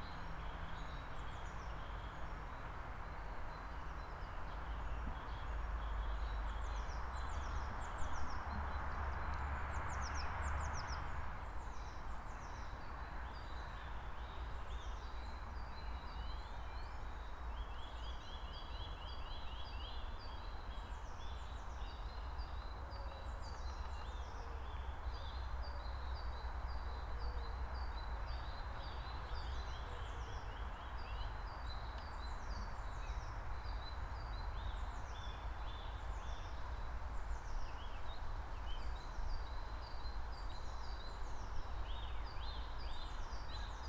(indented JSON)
{
  "title": "Солитьюд, Штутгарт, Германия - Walking around Akademie Schloss Solitude",
  "date": "2015-03-13 11:00:00",
  "description": "The Akademie Schloss Solitude and neighborhood: forest, vehicles, castle visitors.\nRoland R-26. Early Spring.",
  "latitude": "48.78",
  "longitude": "9.08",
  "altitude": "492",
  "timezone": "Europe/Berlin"
}